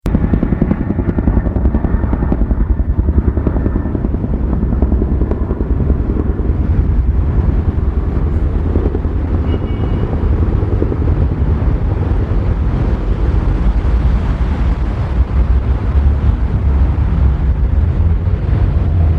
sat on embankment helicopter flies over london
London, UK, 16 May 2011